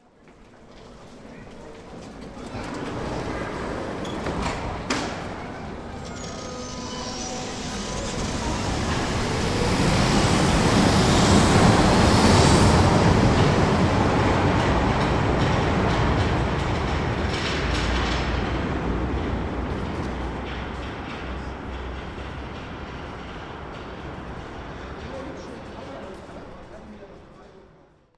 Schwebebahn: Hbf
Die Wuppertaler Schwebebahn (offizieller Name Einschienige Hängebahn System Eugen Langen) ist ein um 1900 von MAN konstruiertes und erbautes, 1901 freigegebenes und bis heute in Betrieb stehendes Nahverkehrssystem im Stadtbereich von Wuppertal. Das System war ursprünglich auch für viele andere Städte geplant, so existierten beispielsweise Pläne für Schwebebahnen in Hamburg, Berlin, London und den deutschen Kolonialgebieten.
Nähe Hauptbahnhof